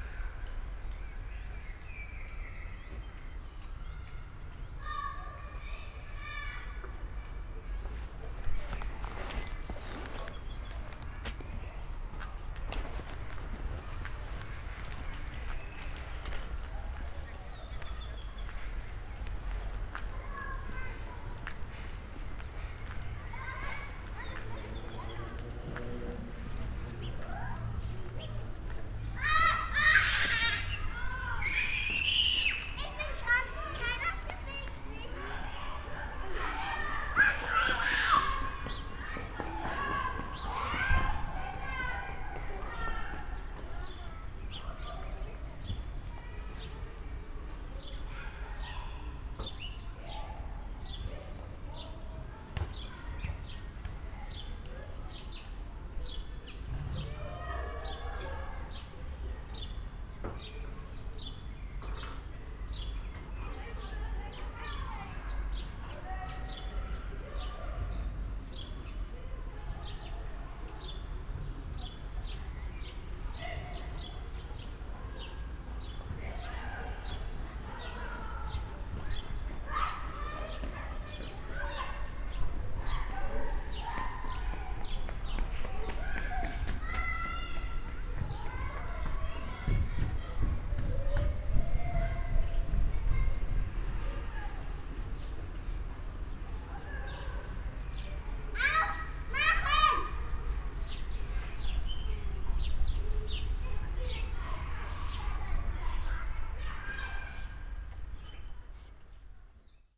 Elsenstr., Treptow, Berlin - residential area, ambience
ambience around house near Elsenstr.
Sonic exploration of areas affected by the planned federal motorway A100, Berlin.
(SD702, Audio Technica BP4025)